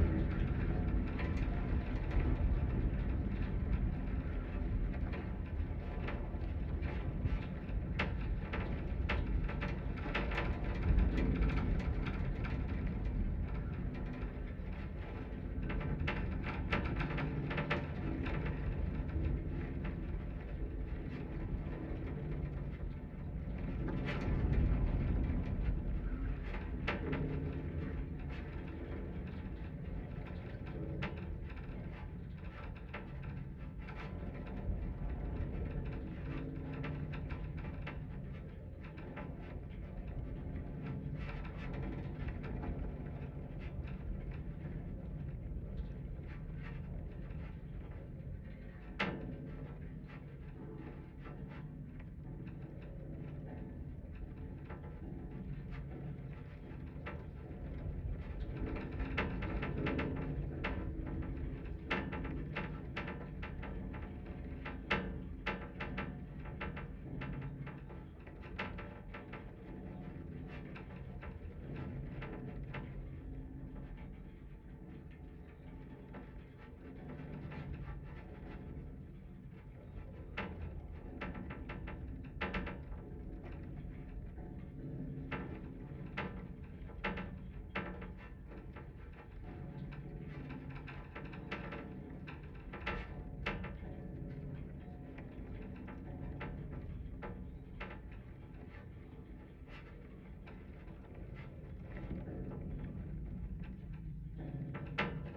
Troon, Camborne, Cornwall, UK - A Fence In The Wind
This recording uses two contact microphones to pick up the vibrations made by the wind passing over a metal wire fence. The weather wasn't overly windy, but enough to have an effect on the object. I used two Jrf contact microphones a Sound Devices Mixpre-D and a Tascam DR-100 to make my recording.
10 February 2016